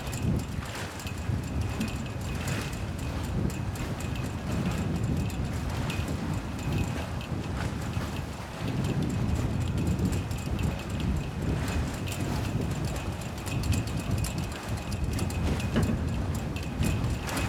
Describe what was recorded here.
four cables dancing in the wind and hitting flag poles they are attached to. very strong wind.